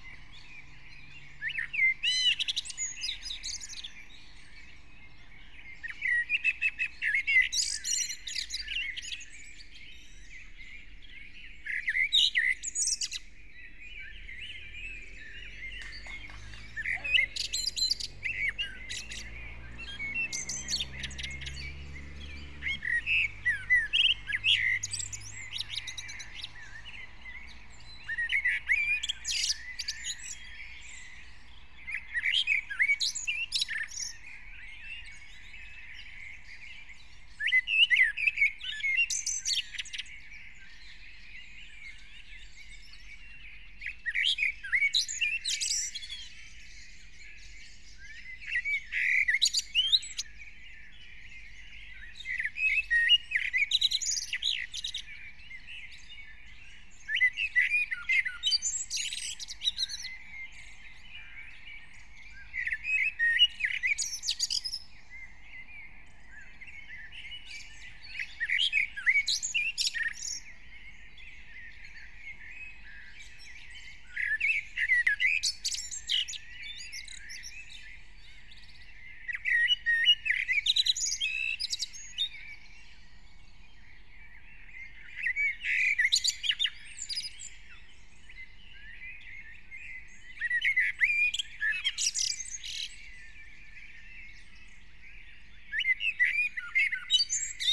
04:00 Brno, Lužánky - early spring morning, park ambience
(remote microphone: AOM5024HDR | RasPi2 /w IQAudio Codec+)